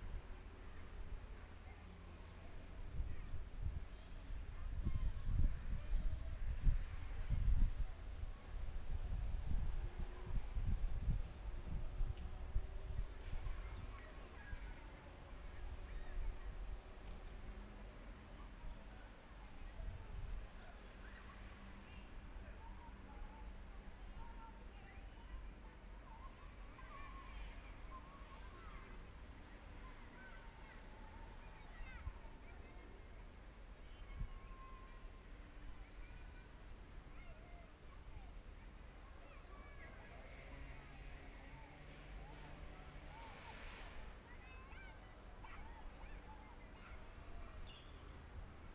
Ares: sound ambience from the balcony in a coast village in the Northwest of Spain
A Coruña, Spain